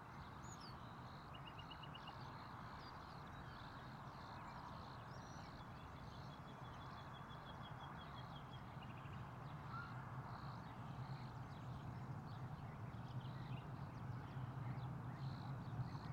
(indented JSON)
{
  "title": "Rijeka, Croatia - Grasshoppers, Birds - 2",
  "date": "2013-05-19 19:45:00",
  "latitude": "45.33",
  "longitude": "14.47",
  "altitude": "150",
  "timezone": "Europe/Zagreb"
}